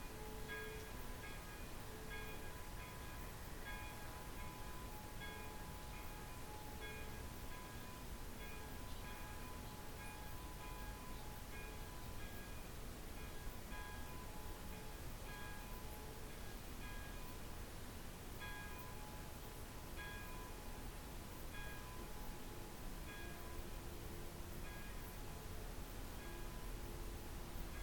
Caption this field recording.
Pre-autumn silience evening with 7 o'clock bells of the village Ellend (400m) and then of the village Berkesd (3000m). We have dinner every day at 7pm, so this bell is also a sign of it. (Bells are ringing also the next day 8am when someone from the village dies.) This place is going to be a location for artificial soundscapes under the project name Hangfarm (soundfarm).